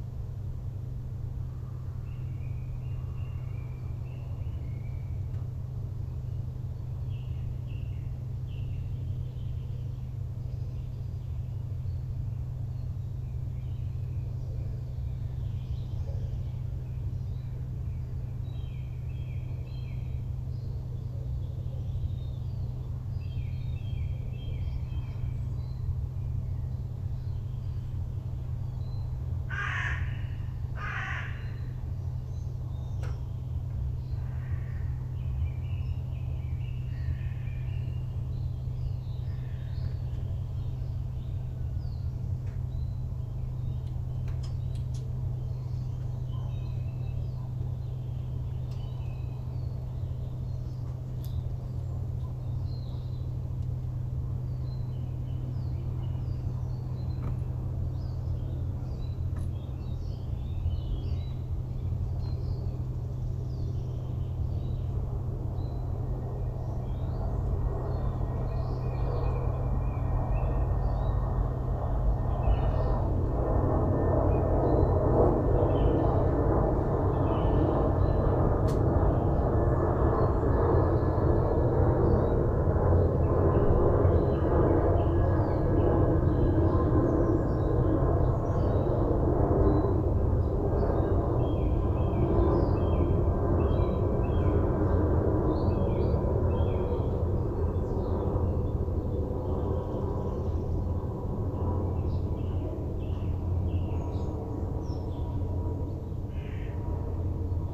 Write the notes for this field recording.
Recorded at Health complex Klyazma during days of the iУчитель contest.